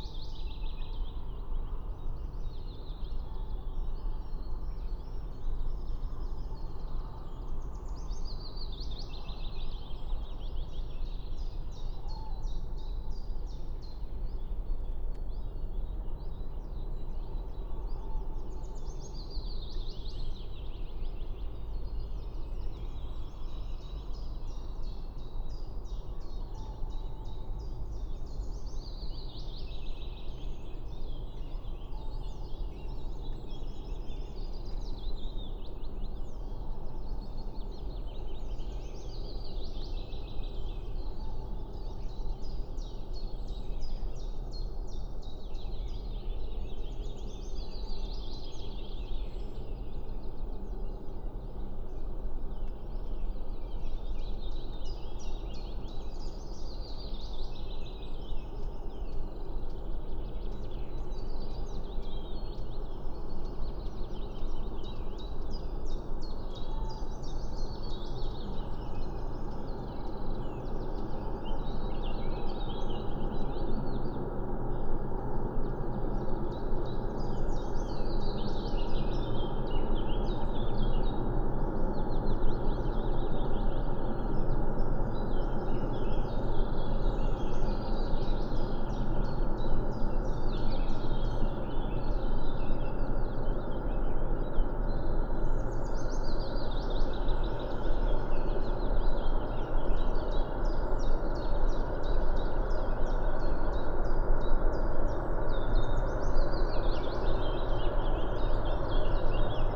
at the river Löcknitz, Grünheide - morning ambience with aircraft and distant train
early morning at the Löcknitz, a small river in east germany. attracted by the calls of bird i could not identify. it's a pity that there's a constant rumble of aircrafts, and distant freight train traffic. no such thing like silence...
(SD702, MKH8020 AB)